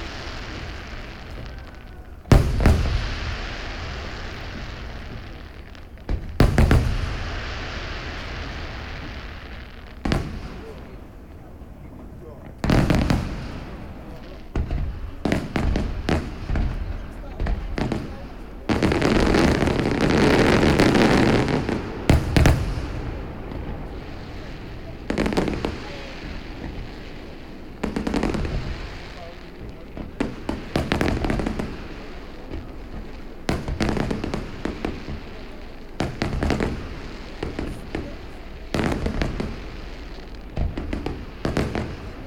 Brno Reservoir, Czech Republic | Brněnská přehrada, Brno-Kníničky, Česko - ”Ignis Brunensis“ Fireworks Competition
Festive event at Brno reservoir. Fireworks (shortened, provided by the Theatrum Pyroboli) and walk (with people) through an amusement park (by the reservoir).
Binaural recording, listen through decent headphones.
Soundman OKM Studio II microphones, Soundman A3 preamp. HRTF corrected, dynamic is lowered using multiband tool.